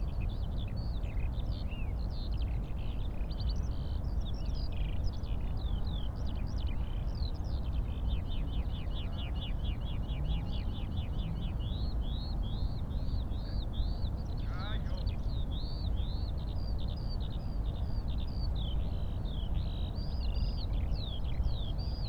Tempelhofer Feld, Berlin - spring morning ambience
spring morning ambience on Tempelhofer Feld, old airport area. Many Eurasian skylarks (Alauda arvensis) in the air, and distant rush hour noise from the Autobahn A100
(SD702, S502 ORTF)